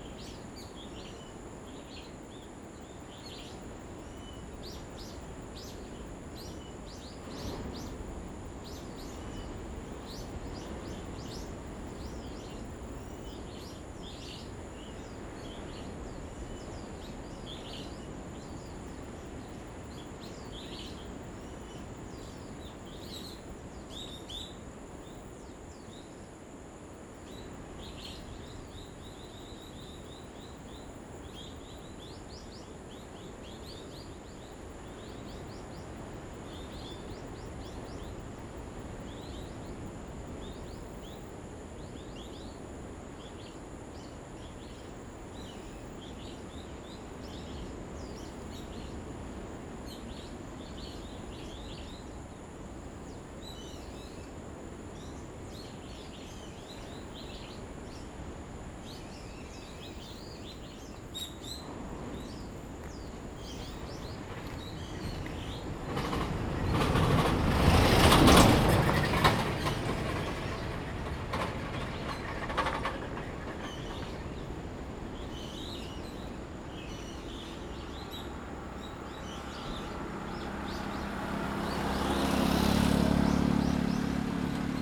Koto island, Taiwan - Birds and the waves
Birds singing, Sound of the waves
Zoom H2n MS +XY
Taitung County, Taiwan